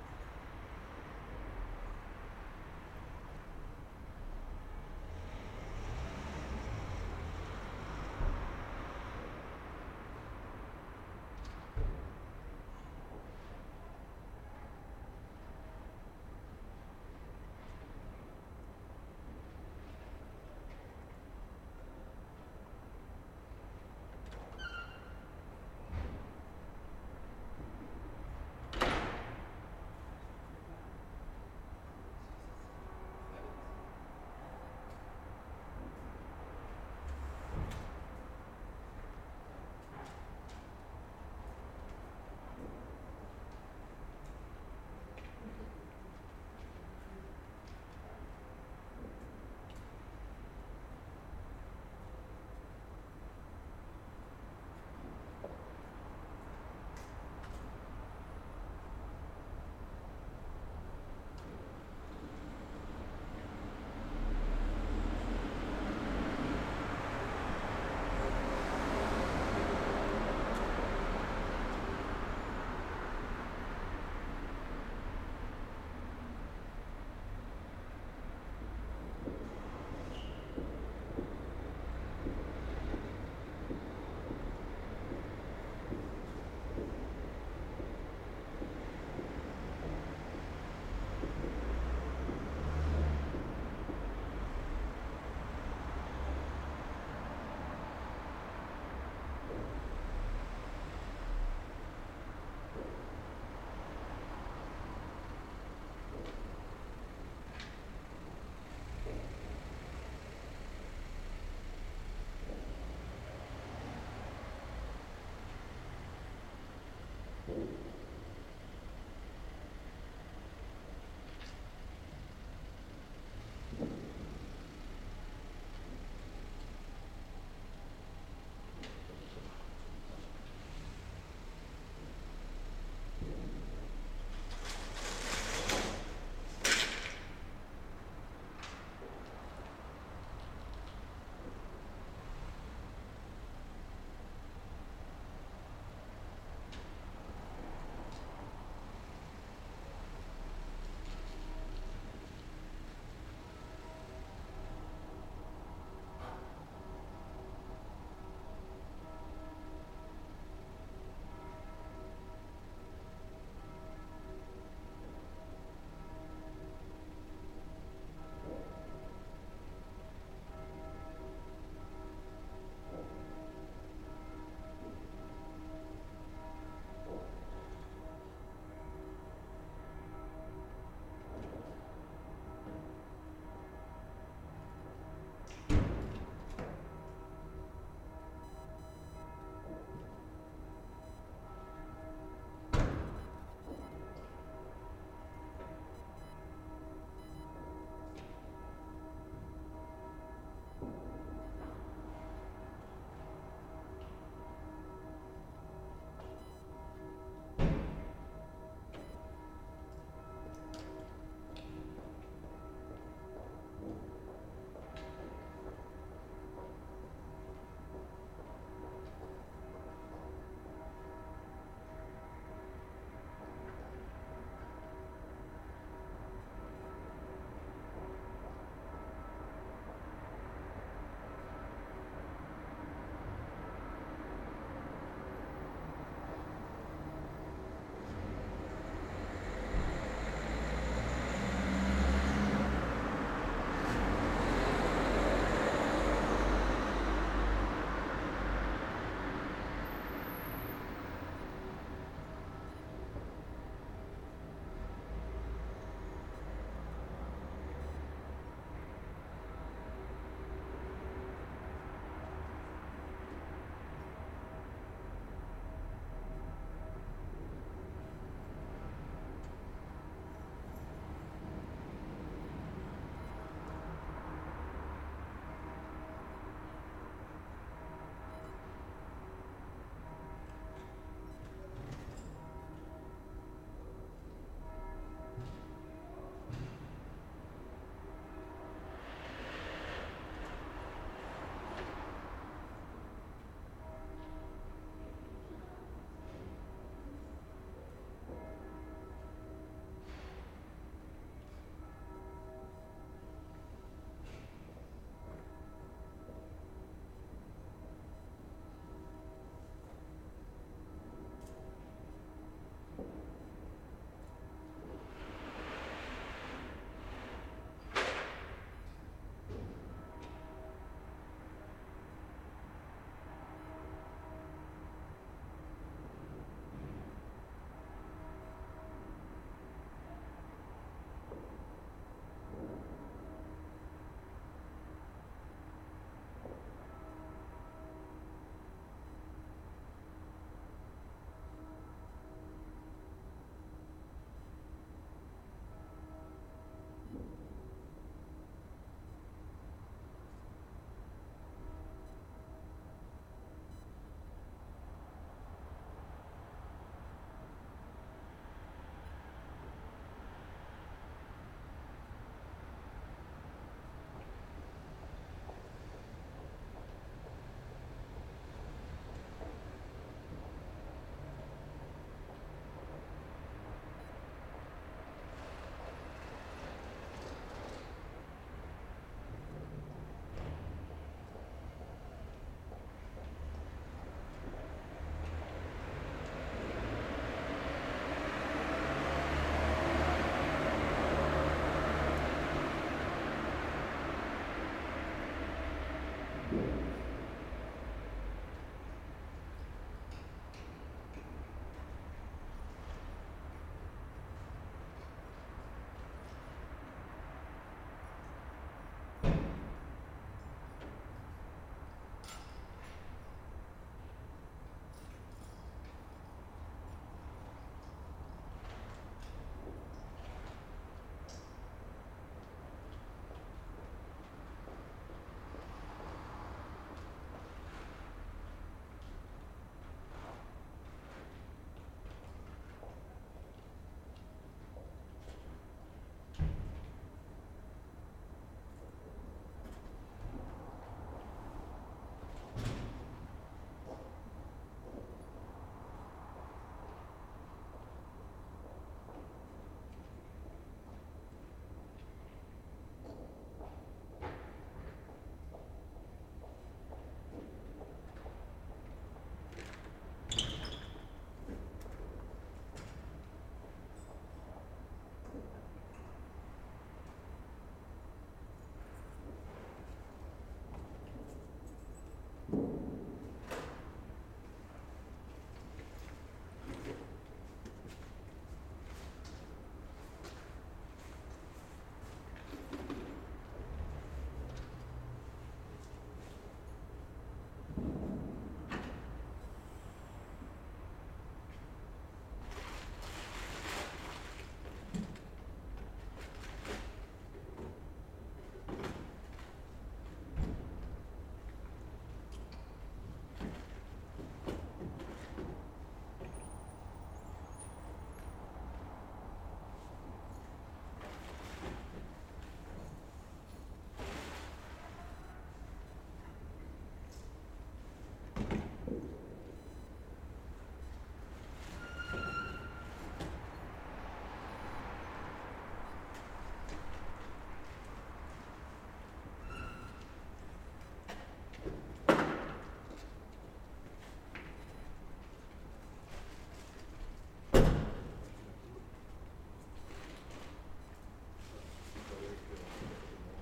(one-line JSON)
{"title": "Rue Sleidan, Strasbourg, Frankreich - evening, before new years eve", "date": "2019-12-30 19:30:00", "description": "sound recording from the balcony on the second floor. evening, before new year's eve, a few bangs, some traffic, car doors, pedestrians, bells. zoom h6", "latitude": "48.59", "longitude": "7.77", "altitude": "146", "timezone": "Europe/Paris"}